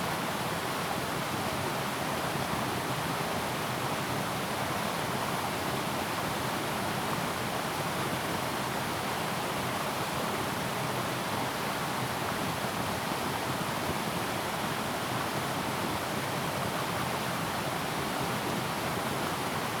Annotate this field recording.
Faced with streams, Zoom H2n MS+ XY